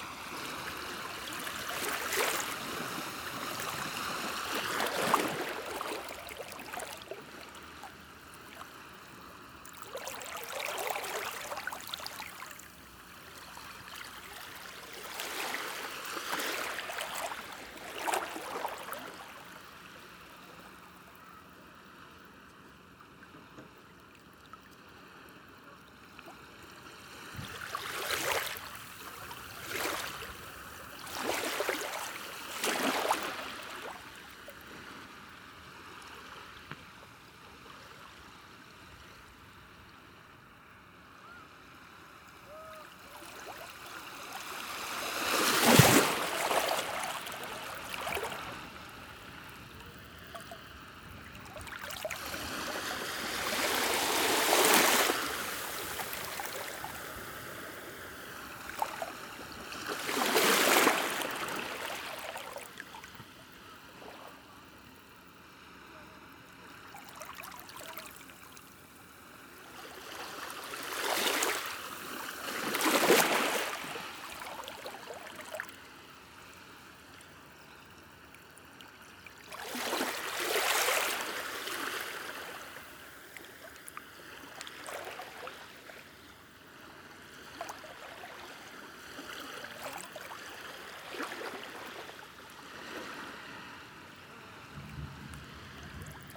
Les Portes-en-Ré, France - The whales beach on Ré island
Recording of the sea during one hour on the whales beach. At the beginning, teenagers are loudly playing. Just after I move on the right, behind big rocks. It's low tide. Waves are small, ambiance is quiet. Young children are playing on the beach or in the water. On the distant whales beacon, a storm thuds.